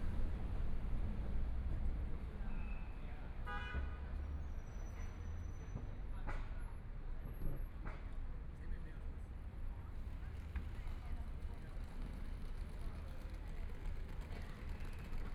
Taibao City, Chiayi County - The square outside the station
The square outside the station, Traffic Sound, Binaural recordings, Zoom H4n+ Soundman OKM II
Chiayi County, Taiwan